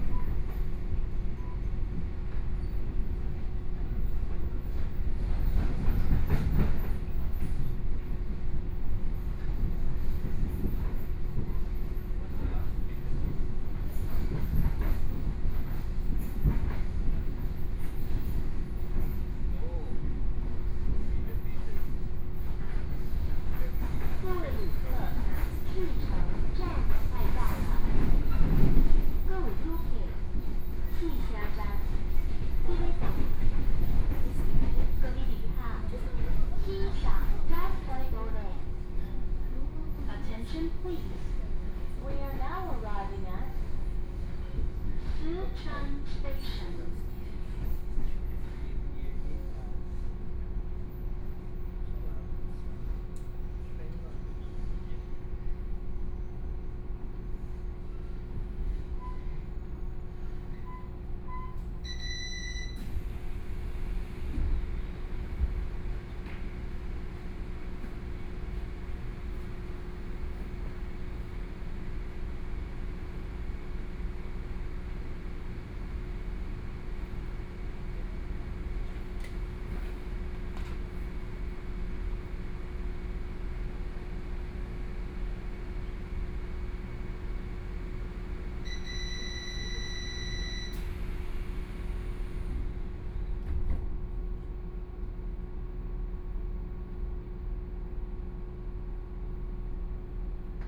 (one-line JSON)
{"title": "Jiaosi Township, Yilan County - Local Train", "date": "2013-11-07 13:50:00", "description": "from Yilan Station to Jiaoxi Station, Binaural recordings, Zoom H4n+ Soundman OKM II", "latitude": "24.80", "longitude": "121.77", "altitude": "5", "timezone": "Asia/Taipei"}